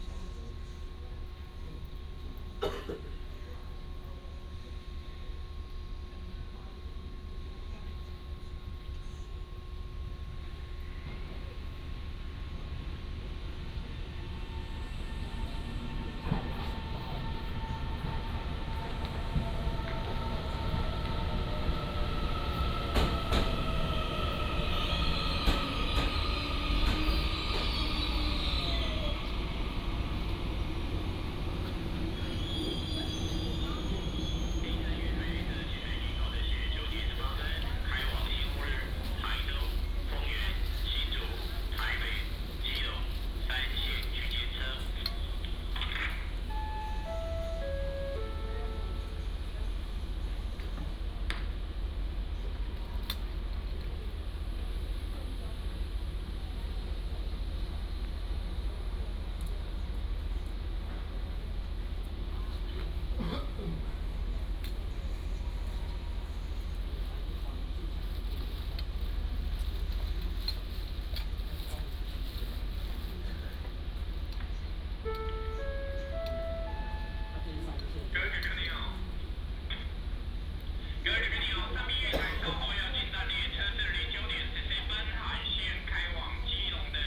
Changhua Station, Changhua City - At the station platform
At the station platform, The train passes by, Station Message Broadcast, Train arrives and leaves
Changhua City, Changhua County, Taiwan, 13 February 2017